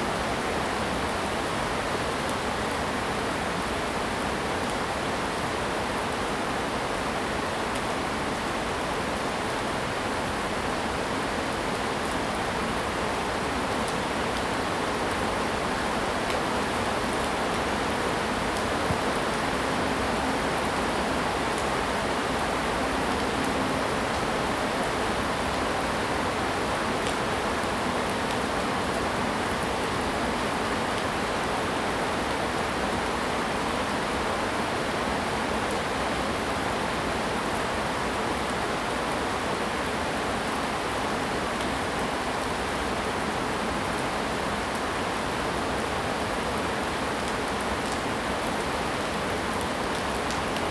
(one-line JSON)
{"title": "綠動奇蹟, 桃米里 Puli Township - Heavy rain", "date": "2015-08-26 17:25:00", "description": "Heavy rain, Traffic Sound\nZoom H2n MS+XY", "latitude": "23.94", "longitude": "120.93", "altitude": "463", "timezone": "Asia/Taipei"}